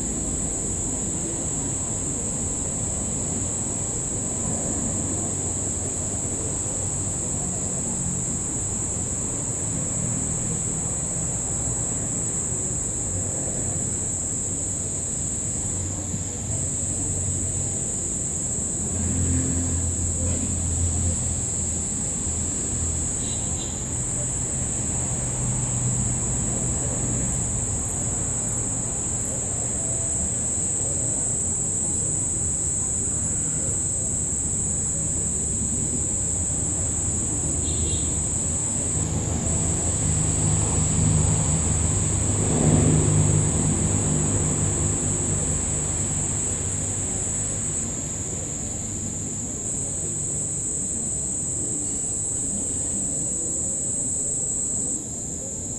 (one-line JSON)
{"title": "Santa Isabel, Carepa, Antioquia, Colombia - Tilapias restaurant", "date": "2014-12-12 22:08:00", "description": "Night wild sounds in a restaurant outside Carepa\nZoom H2n XY", "latitude": "7.74", "longitude": "-76.66", "altitude": "33", "timezone": "America/Bogota"}